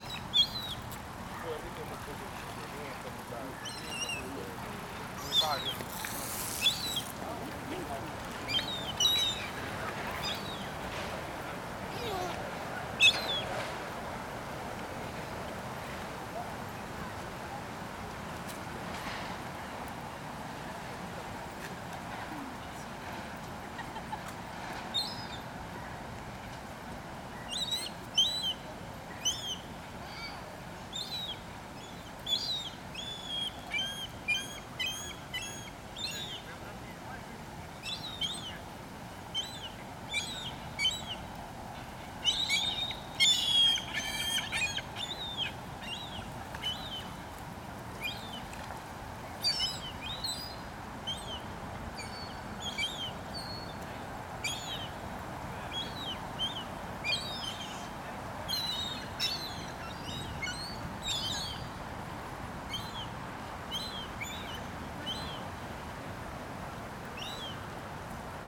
Le Plateau-Mont-Royal, Montreal, QC, Canada - AMB CityPark Day Seagull People
Park Lafontaine
Recorded with a pair of DPA 4060. AB Setup.
10 September 2016, 17:00